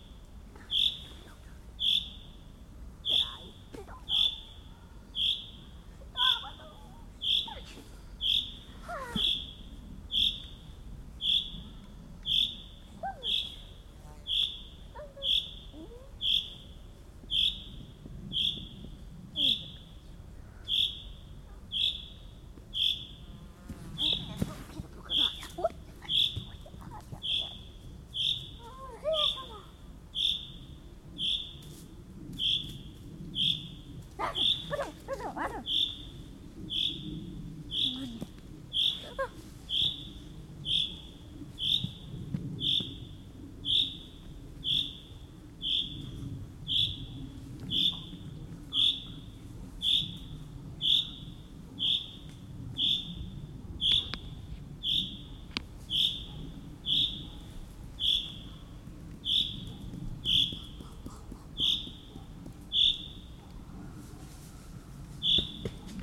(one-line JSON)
{"title": "Krzywe, Krzywe, Poland - Bird ?", "date": "2018-07-05 18:10:00", "description": "Bird calling. Recorded on Tascam DR 100 + micbooster Clippy XLR EM172", "latitude": "54.09", "longitude": "23.02", "altitude": "153", "timezone": "GMT+1"}